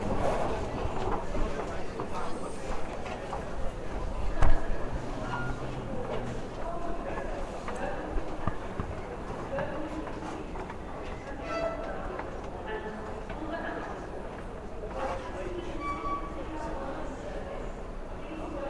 {
  "title": "Underground from Goodge Street to Euston Stations",
  "date": "2010-10-09 13:00:00",
  "description": "Travelling from Goodge Street (Tottenham Court Road) to Euston Station.",
  "latitude": "51.52",
  "longitude": "-0.13",
  "altitude": "37",
  "timezone": "Europe/London"
}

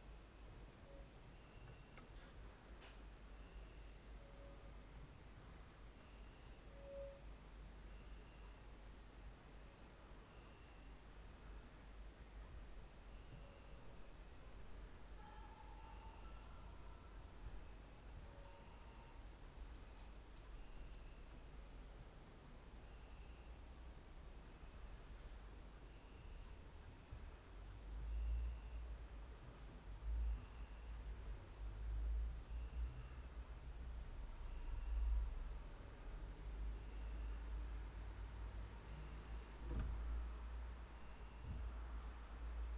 {
  "title": "Mladinska, Maribor, Slovenia - late night creaky lullaby for cricket",
  "date": "2013-08-18 02:04:00",
  "description": "cricket, quiet night, doors",
  "latitude": "46.56",
  "longitude": "15.65",
  "altitude": "285",
  "timezone": "Europe/Ljubljana"
}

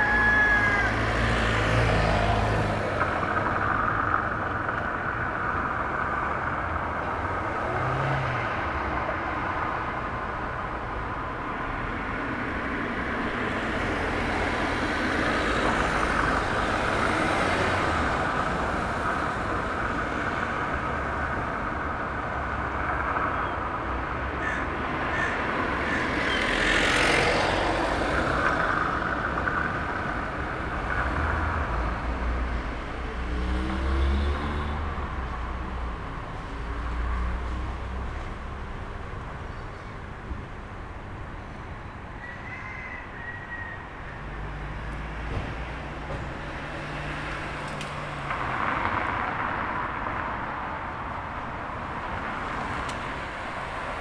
{
  "title": "Binckhorst Mapping Project: Los Gallos. 12-02-2011/16:40h - Binckhorst Mapping Project: Los Gallos",
  "date": "2011-12-02 16:40:00",
  "description": "Binckhorst Mapping Project: Los gallos",
  "latitude": "52.07",
  "longitude": "4.34",
  "altitude": "1",
  "timezone": "Europe/Amsterdam"
}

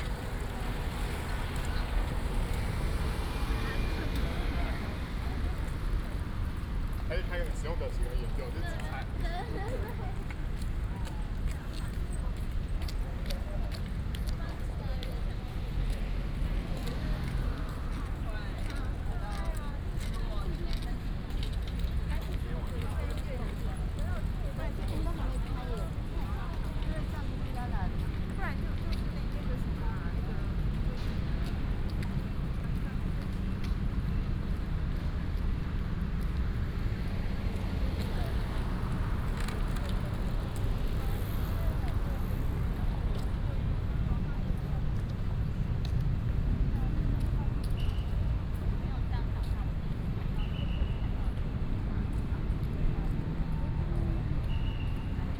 {
  "title": "Royal Palm Blvd., National Taiwan University - Go to university entrance",
  "date": "2016-03-04 18:00:00",
  "description": "walking in the university, Traffic Sound, Bicycle sound",
  "latitude": "25.02",
  "longitude": "121.53",
  "altitude": "19",
  "timezone": "Asia/Taipei"
}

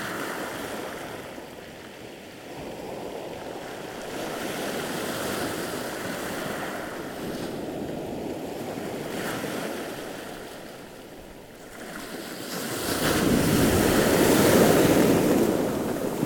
{
  "title": "Ars-en-Ré, France - The Kora Karola beach on Ré island",
  "date": "2018-05-22 09:00:00",
  "description": "Recording of the sea during one hour on the Kora Karola beach. It's high tide. Waves are big and strong. Shingle are rolling every wave.",
  "latitude": "46.21",
  "longitude": "-1.54",
  "timezone": "Europe/Paris"
}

